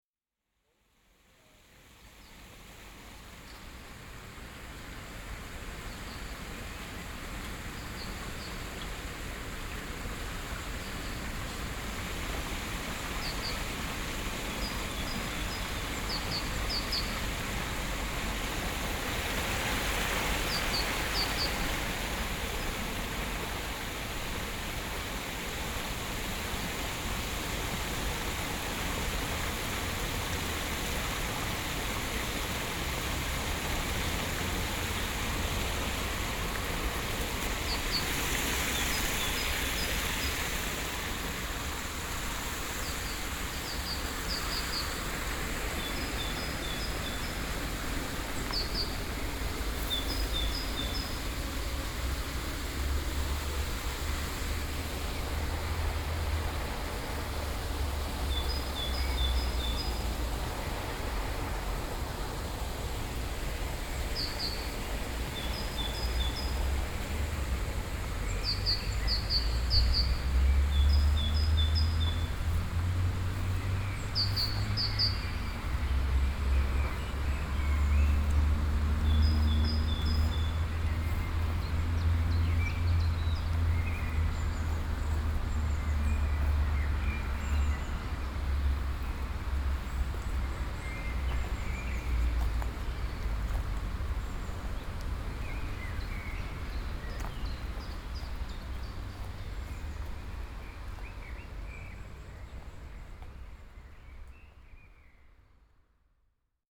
watertje in park / little brook in park
watertje in park, vogels / little brook in park, birds
Nederland, European Union, 7 May, ~15:00